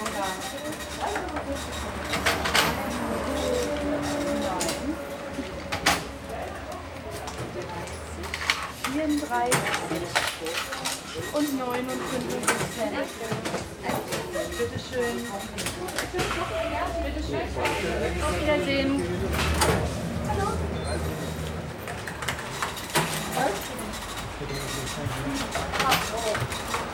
Gleimviertel, Berlin, Deutschland - Berlin. Schlecker-Filiale Schönhauser Allee – Räumungsverkauf
Standort: In der Filiale.
Kurzbeschreibung: Verkäuferinnen, Kassen, Schnäppchenjäger.
Field Recording für die Publikation von Gerhard Paul, Ralph Schock (Hg.) (2013): Sound des Jahrhunderts. Geräusche, Töne, Stimmen - 1889 bis heute (Buch, DVD). Bonn: Bundeszentrale für politische Bildung. ISBN: 978-3-8389-7096-7